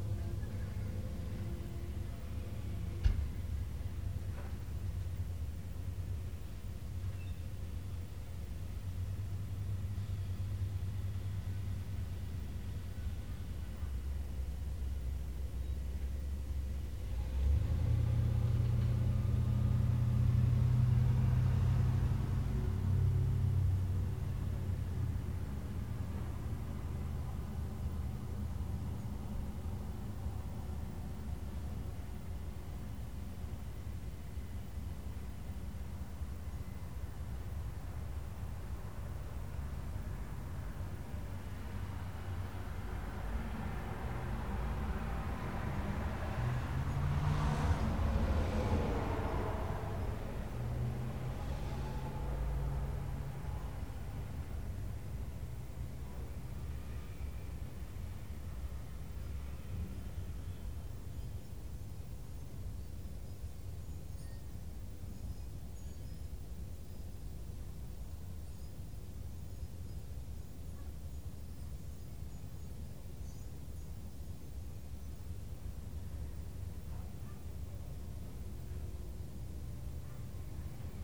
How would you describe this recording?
A quiet evening in West Seattle, overlooking Elliott Bay toward downtown. The sounds of human traffic are reflected off the concrete wall surrounding the parking lot beneath my deck, creating moiré patterns in sound. A multitude of sources overlap and blend in surprising ways. This was my first phonographic "field recording, " taken off the deck of my West Seattle apartment with my then-new Nakamichi 550 portable cassette recorder. Twenty years later it became the first in a series of Anode Urban Soundscapes, when I traded in the Nak for a Sony MZ-R30 digital MiniDisc recorder and returned to being out standing in the field. The idea came directly from Luc Ferrari's "Presque Rien" (1970). Major elements: * Car, truck and bus traffic, * Prop and jet aircraft from Sea-Tac and Boeing airfields, * Train horns from Harbor Island (1 mile east), * Ferry horns from the Vashon-Fauntleroy ferry (4 miles south)